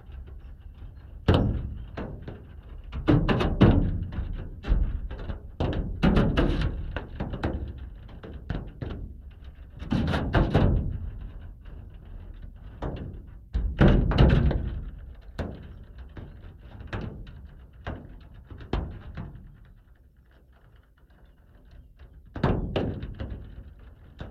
Šlavantai, Lithuania - Flies on a protective aluminium mesh
Dual contact microphone recording of a few flies stuck between a closed window and a protective anti-insect aluminium mesh, crawling and bouncing.
Lazdijų rajono savivaldybė, Alytaus apskritis, Lietuva, 8 June 2020, 13:00